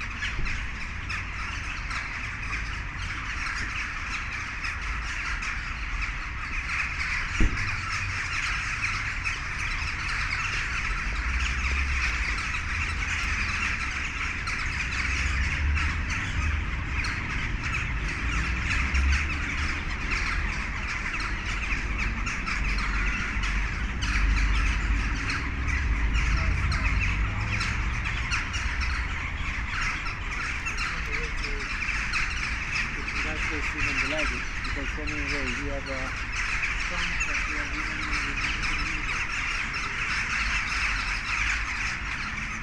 NEW BORN, Prishtinë - Prishtina Crows
The city is famous for its crows. They also gather in the city center in the early evening and determine the sound sphere.